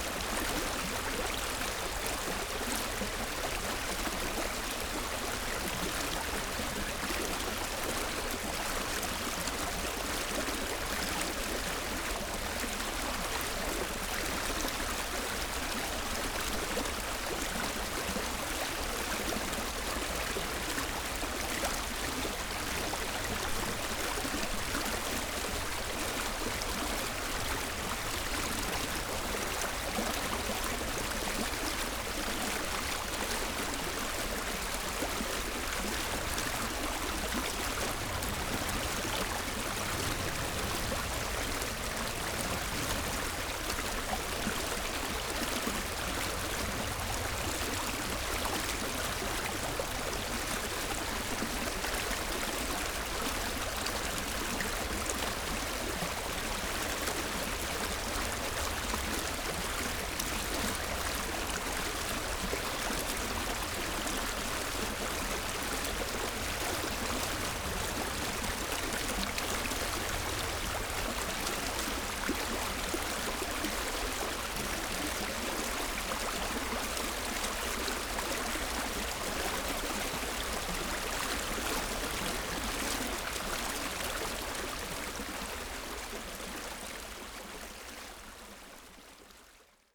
{"title": "Wuhletal, Biesdorf, Berlin - river Wuhle murmuring water flow", "date": "2016-04-16 12:25:00", "description": "Berlin, Wuhletal, river Wuhle murmuring on various levels and frequencies\n(SD702, DPA4060)", "latitude": "52.51", "longitude": "13.57", "altitude": "39", "timezone": "Europe/Berlin"}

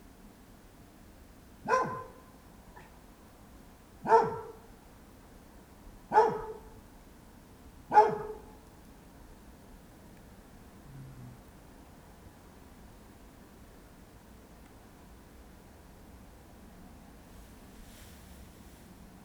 {"title": "Maintenon, France - Dog barking", "date": "2016-12-25 21:30:00", "description": "Again and again, the dog is barking everytime somebody passes here !", "latitude": "48.59", "longitude": "1.58", "altitude": "115", "timezone": "GMT+1"}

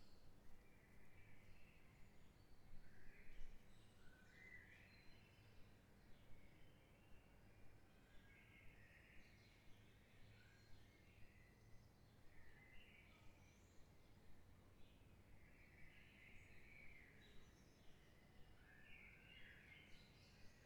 {"title": "Borgofranco dIvrea, Metropolitan City of Turin, Italy - Borgofranco d Ivrea Summer Waking up", "date": "2019-07-11", "description": "Borgofranco d Ivrea Waking up, half hour at 5am (church bells on 1´50´´)\nVillage and fauna increasing sound entrophy of a summer morning\ncontaining Birds, bells, newspaper delivery, etc....\nH1 zoom + wind shield, inner court, place on the ground", "latitude": "45.51", "longitude": "7.86", "altitude": "258", "timezone": "Europe/Rome"}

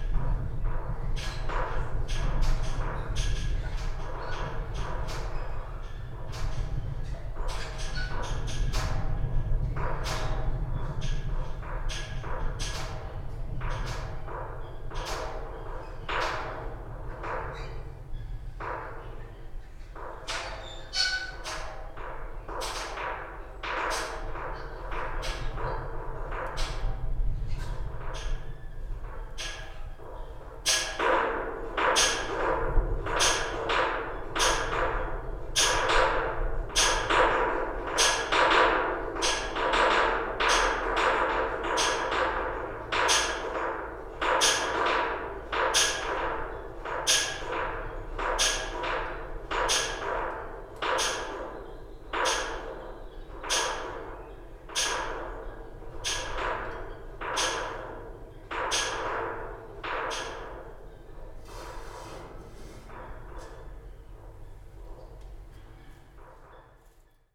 {"title": "light pole in the park, Torun Poland", "date": "2011-04-05 11:32:00", "description": "recording from inside a large metal light pole", "latitude": "53.01", "longitude": "18.58", "altitude": "50", "timezone": "Europe/Warsaw"}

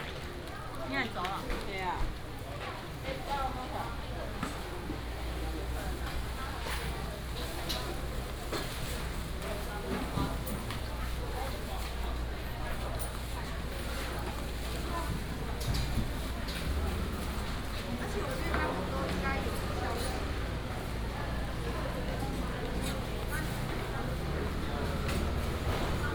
{"title": "山仔頂市場, 平鎮區 - Walking through the traditional market", "date": "2017-08-17 06:43:00", "description": "Traditional market, vendors peddling", "latitude": "24.90", "longitude": "121.21", "altitude": "219", "timezone": "Asia/Taipei"}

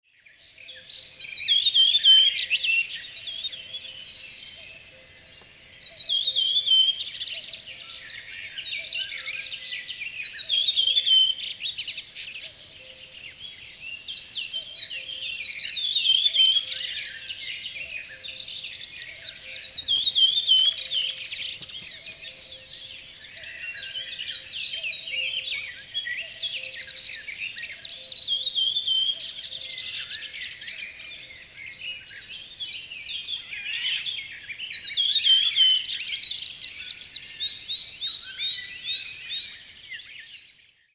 {"title": "Piiumetsa, Estonia. Birds in forest.", "date": "2002-06-01 03:50:00", "description": "Redwing and other birds", "latitude": "58.91", "longitude": "25.30", "altitude": "70", "timezone": "Europe/Tallinn"}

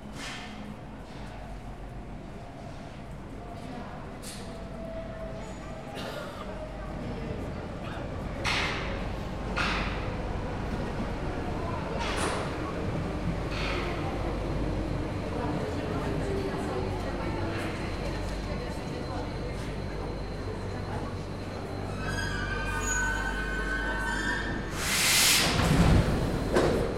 {"title": "Avenue Gabriel Péri, Saint-Ouen, France - Station de Metro, Garibaldi", "date": "2019-01-25 09:45:00", "description": "Garibaldi metro station (internal microphones on Tascam DR-40)", "latitude": "48.91", "longitude": "2.33", "altitude": "34", "timezone": "GMT+1"}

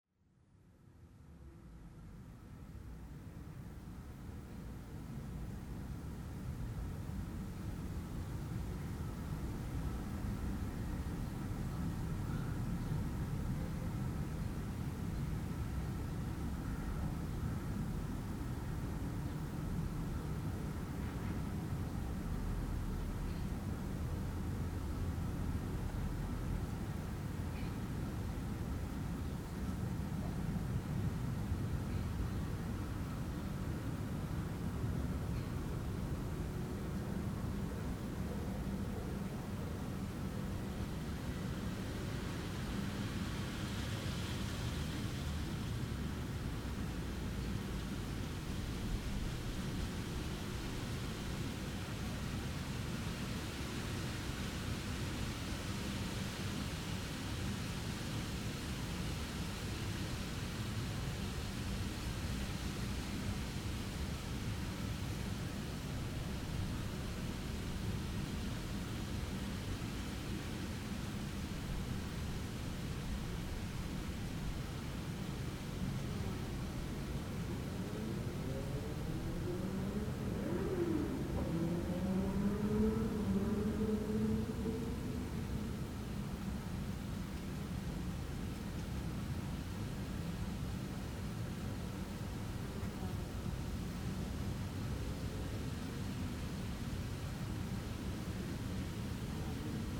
{"title": "Le Mans, France - Near the grave", "date": "2017-08-14 14:30:00", "description": "Near the grave of Jean-Luc Lecourt, a singer better known as Jean-Luc le Ténia. It means Jean-Luc, his first name, the tapeworm. He committed suicide on 2011, may 3. His tomb is completely empty, excerpt an only hot pepper pot. His name is hidden on the right of the grave. The google view is prior to 2011, as the place is empty.\nRecording is 5 minuts of the very big silence near the grave.", "latitude": "48.02", "longitude": "0.19", "altitude": "51", "timezone": "Europe/Paris"}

